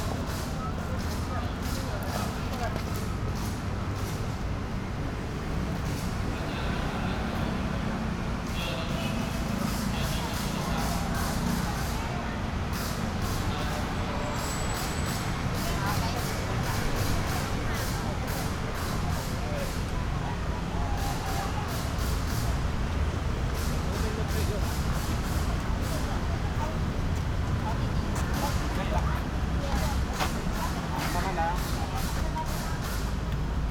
Parents waiting for students after class, Sony PCM D50
苓雅區 (Lingya), 高雄市 (Kaohsiung City), 中華民國, 5 April 2012